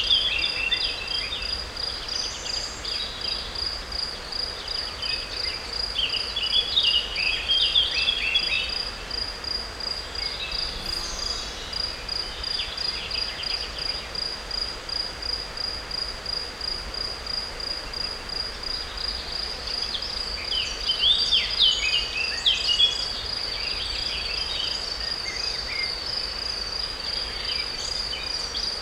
Zona Turro, Muggio, Svizzera - S. Giovanni - Muggio, Switzerland
This soundscape was recorded next to the Breggia river in Valle di Muggio (Ticino, Switzerland), in the evening.
Bird's songs, crickets, insects, river.
It is a binaural recording, headphones are recommended.
Summer Solstice June 21, 2021
Ticino, Schweiz/Suisse/Svizzera/Svizra, 21 June 2021